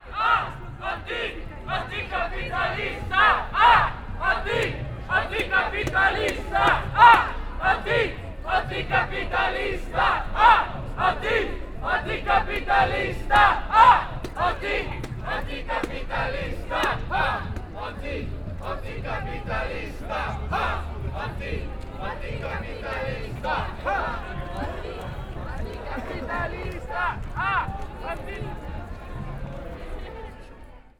{"date": "2011-10-15 14:53:00", "description": "Occupy Brussels, Boulevard Baudouin, Anticapitalista", "latitude": "50.86", "longitude": "4.35", "altitude": "22", "timezone": "Europe/Brussels"}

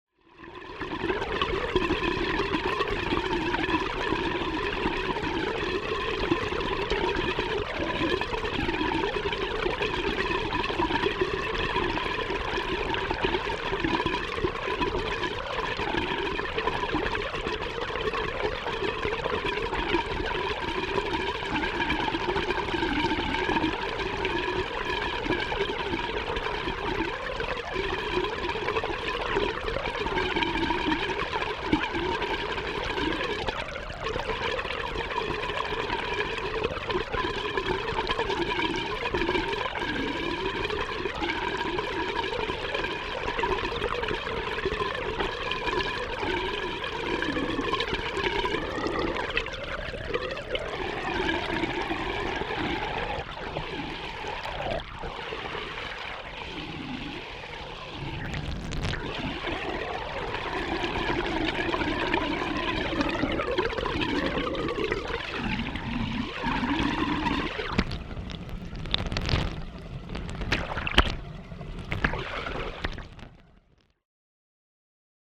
{"title": "Walking Holme small weir", "date": "2011-04-20 11:32:00", "description": "Hydrophone placed in the weir. Walking Holme", "latitude": "53.56", "longitude": "-1.80", "altitude": "169", "timezone": "Europe/London"}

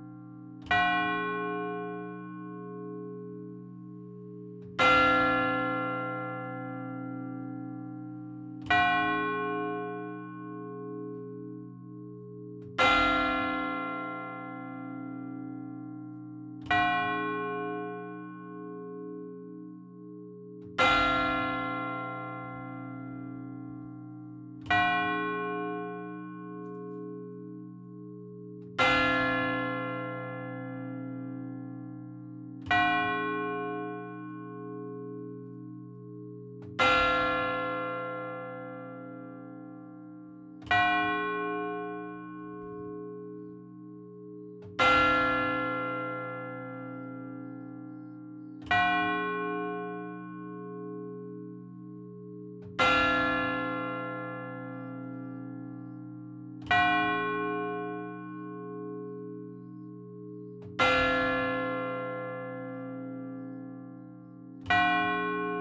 {"title": "Rte de Roubaix, Lecelles, France - Lecelles - église", "date": "2021-05-06 12:00:00", "description": "Lecelles (Nord)\néglise - Glas automatisé - Cloche grave", "latitude": "50.47", "longitude": "3.40", "altitude": "20", "timezone": "Europe/Paris"}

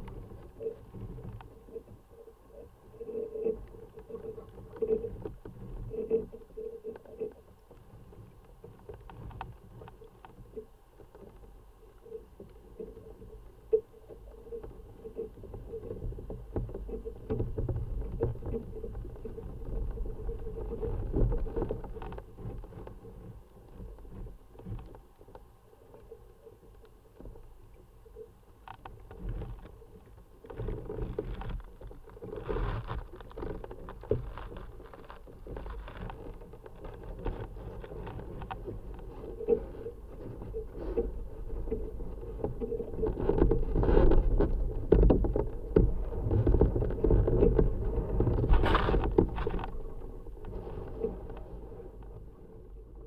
{"title": "Joneliskes, Lithuania, in the tipi tent", "date": "2018-09-23 18:45:00", "description": "first part: listening inside the tipi, second part - listening vibrations with contact mics", "latitude": "55.47", "longitude": "25.58", "altitude": "124", "timezone": "Europe/Vilnius"}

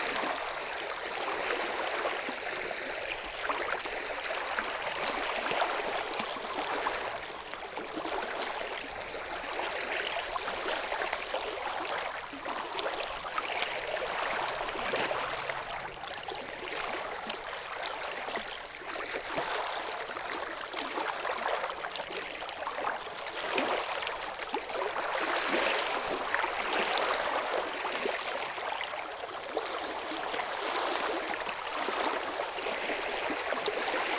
{"title": "Shirak, Arménie - Arpi lake", "date": "2018-09-10 11:00:00", "description": "Sound of the Arpi lake, locally called Arpi lich. It's a quite big lake, with a very bad weather because of the mountains on the neighborhood.", "latitude": "41.07", "longitude": "43.64", "altitude": "2027", "timezone": "Asia/Yerevan"}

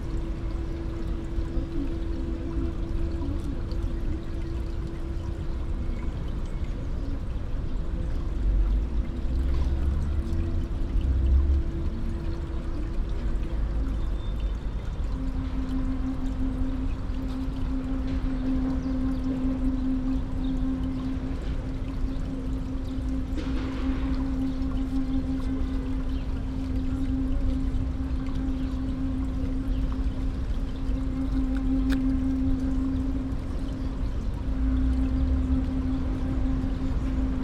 {"title": "Ebertstraße, Ecke, Scheidemannstraße, Berlin, Deutschland - eerie atmosphere", "date": "2022-06-14 14:30:00", "description": "The Sinti and Roma memorial in the Berlin city park \"Tiergarten\" is an interesting listening site", "latitude": "52.52", "longitude": "13.38", "altitude": "41", "timezone": "Europe/Berlin"}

